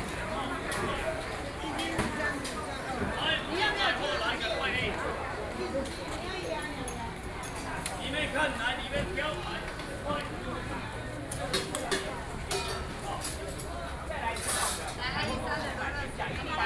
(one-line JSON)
{"title": "Yangmei, Taoyuan County - Traditional Market", "date": "2013-08-14 11:58:00", "description": "walking in the Traditional Market, Sony PCM D50+ Soundman OKM II", "latitude": "24.92", "longitude": "121.18", "altitude": "191", "timezone": "Asia/Taipei"}